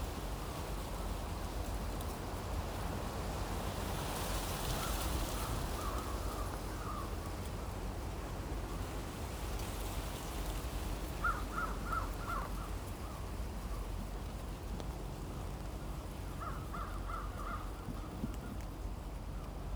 Kings, Subd. B, NS, Canada - Wind in maize, crows and a long approaching tractor
Wind blows though a field of maize waiting to be harvested. The cobs are heavy. Crows call. Passing vehicles are separate events here and there is time to hear the tractor droning up the hill. As it crests the angle to its trailer changes and allows more freedom for clanking and banging.